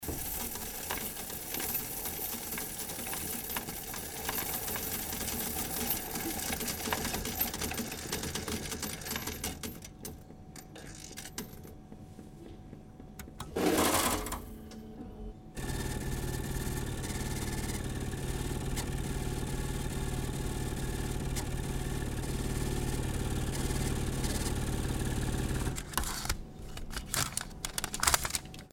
langenfeld, im schaufsfeld, sparkasse - langenfeld, sparkasse, geld zählen 02
geldzählmaschine, direktmikrophonierung
Vorgang 02
soundmap nrw - sound in public spaces - in & outdoor nearfield recordings